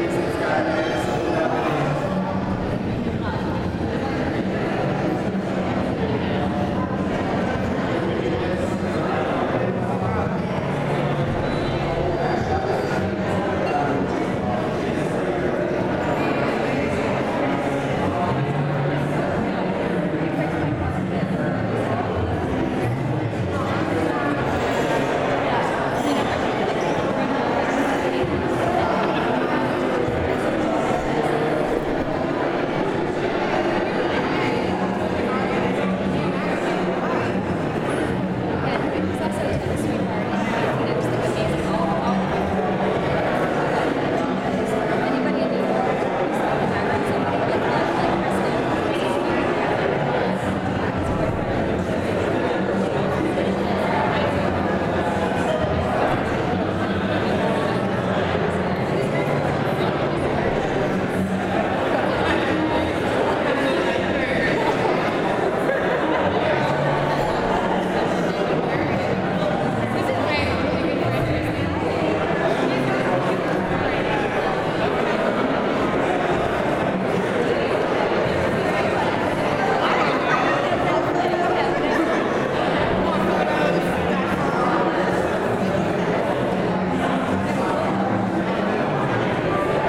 Orlando Airport, waiting in lounge, Florida
Orlando Airport, Florida. Crowds, Field.
Orlando, FL, USA